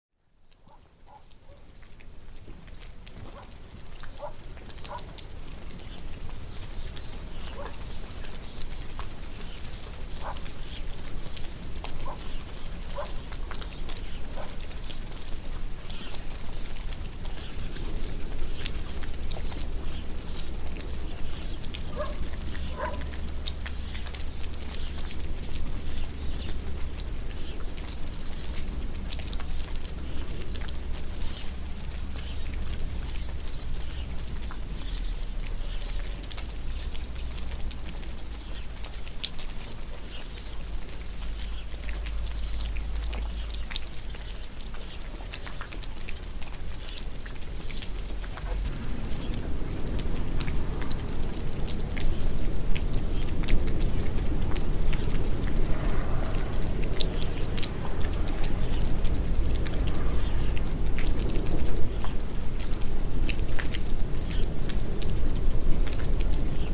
Vebron, France - Endless rain
Rain doesn't stop. I wait in a refuge, as it's lenghty, it's cold and I am soaked.
March 2015